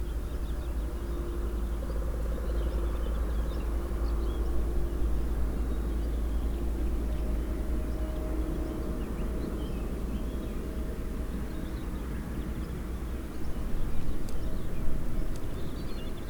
Route Du Capitani, Monferran-Savès, France - lockdown 1 km - noon - angelus rings
recorded during first lockdown, in the field between National 124 and the village (1km from the church was the limit authorized). Zoom H6 capsule xy